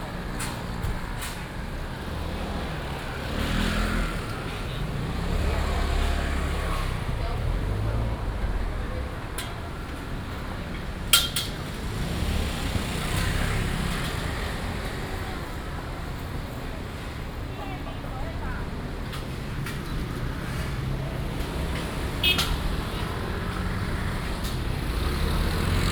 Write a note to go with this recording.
Walking through the traditional market, Please turn up the volume a little. Binaural recordings, Sony PCM D100+ Soundman OKM II